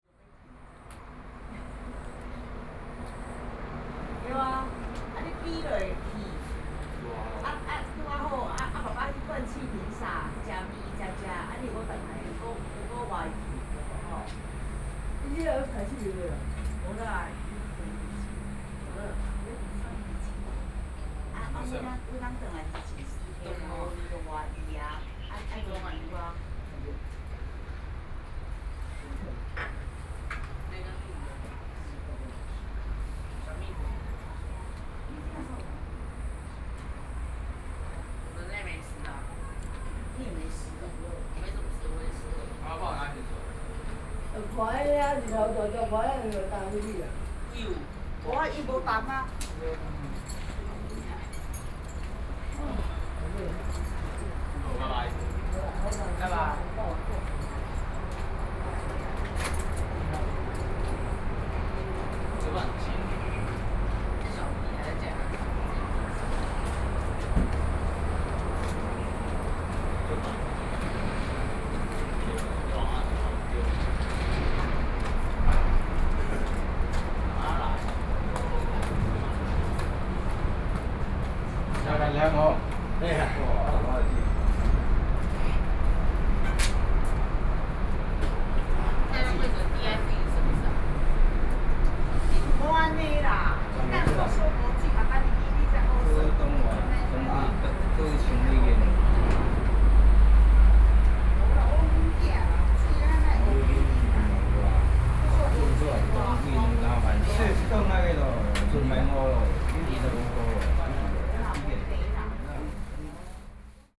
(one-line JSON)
{"title": "鼻頭漁港, Ruifang Dist., New Taipei City - Hot weather", "date": "2012-07-12 10:33:00", "description": "Hot weather, Visitors hiding pavilion rest, Visitor\nBinaural recordings, Sony PCM D50", "latitude": "25.13", "longitude": "121.92", "altitude": "10", "timezone": "Asia/Taipei"}